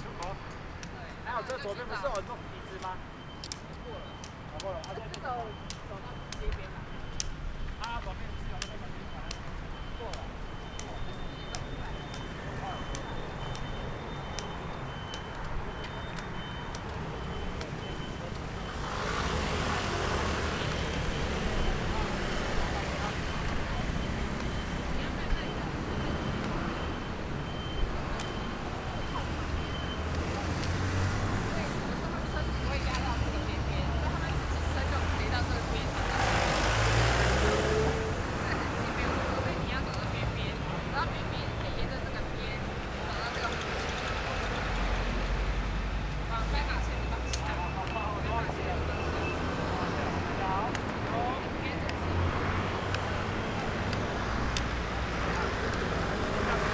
Peace Memorial Park, Taiwan - In the corner of the street
In the corner of the street, Followed a blind, The visually impaired person is practicing walking on city streets, Zoom H6 Ms + SENNHEISER ME67